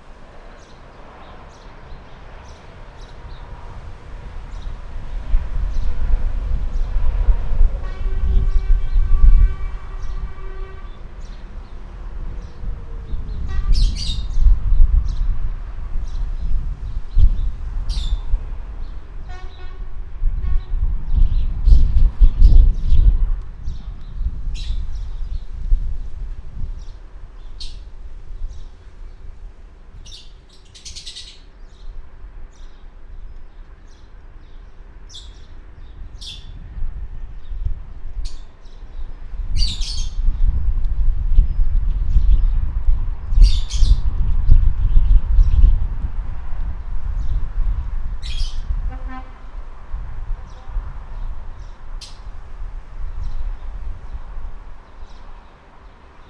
Youstman Street - Wind, Birds and Cars
מחוז ירושלים, מדינת ישראל, 2 April